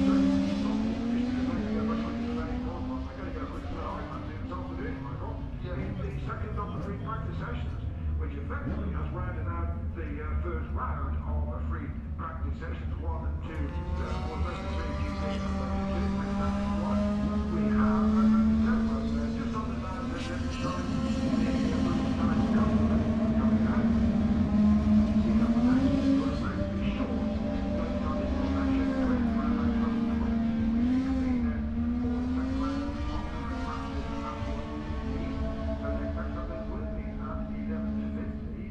Silverstone Circuit, Towcester, UK - british motorcycle grand prix 2019 ... moto two ... fp1 contd ...
british motorcycle grand prix 2019 ... moto two ... fp1 contd ... some commentary ... lavalier mics clipped to bag ... background noise... the disco from the entertainment area ...
East Midlands, England, UK